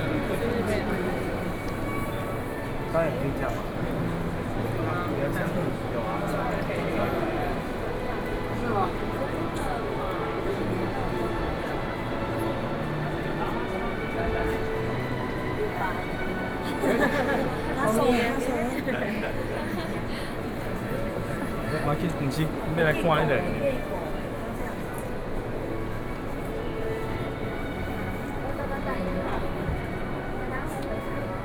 台北世界貿易中心, Xinyi District, Taipei city - In Art Fair
Xinyi District, 台北世界貿易中心展覽大樓(世貿一館)